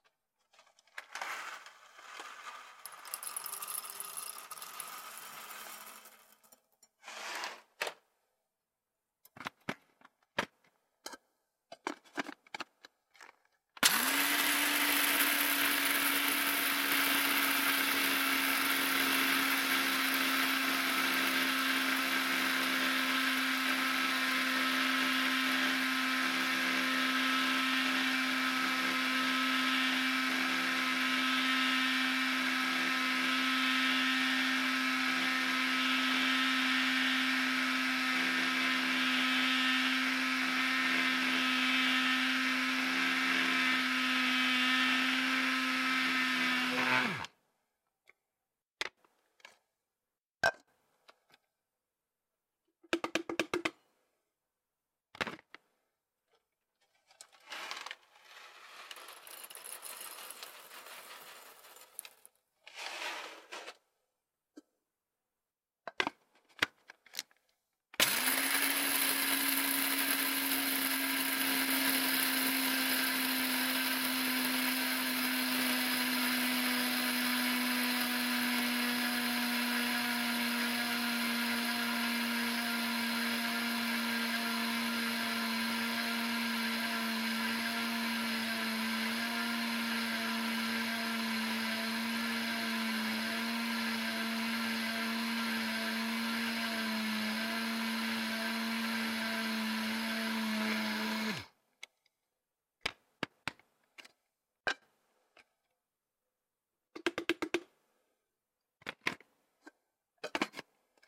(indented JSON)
{
  "title": "Splat! Cakes N Such - Coffee Grinder",
  "date": "2020-02-21 09:45:00",
  "description": "Audio recording of a coffee grinder being used at Splat! Cakes N' Such, a made from scratch bakery that also serves hand crafted coffee drinks. Audio consists of coffee beans being poured into a coffee grinder, coffee grinder operating, ground coffee being poured into a container, and the process repeating.",
  "latitude": "34.36",
  "longitude": "-84.05",
  "altitude": "366",
  "timezone": "America/New_York"
}